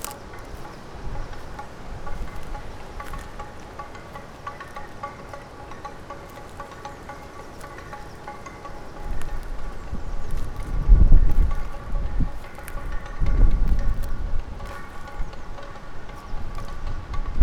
vineyard, piramida - autumn sounds in spring time, wind rattle
while listening to winds through the early spring forest, wind rattle started to turn ...